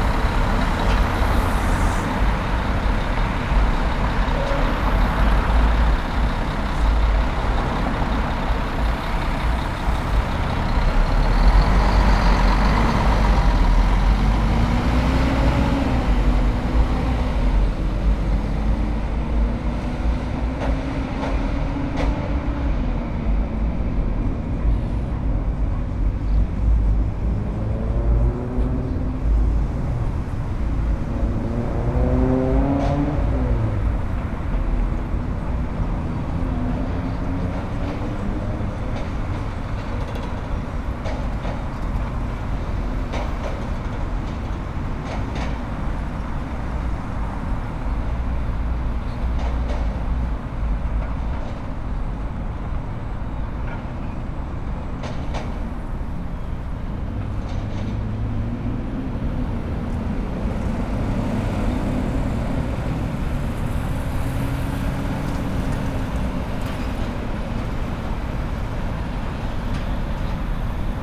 Blvd. López Mateos Pte., Obregon, León, Gto., Mexico - Desde el puente del Parque Hidalgo, arriba del bulevar.
From the Parque Hidalgo bridge, above the boulevard.
Traffic coming and going on the boulevard and some people going over the bridge, among them, some with skateboards.
I made this recording on november 29th, 2021, at 1:04 p.m.
I used a Tascam DR-05X with its built-in microphones and a Tascam WS-11 windshield.
Original Recording:
Type: Stereo
El tráfico que va y viene en el bulevar y algunas personas pasando por el puente, entre ellos, algunos con patinetas.
Esta grabación la hice el 29 de noviembre de 2021 a las 13:04 horas.